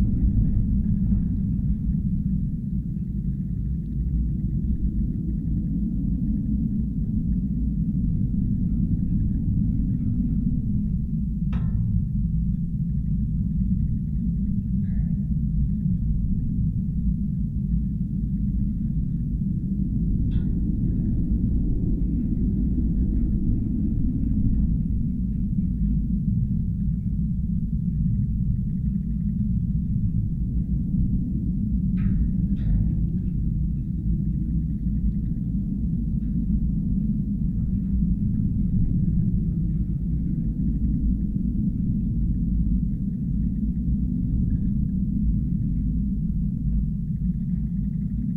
contact microphones on a fence at the beach. grand drone:)
Kandanos Selinos, Greece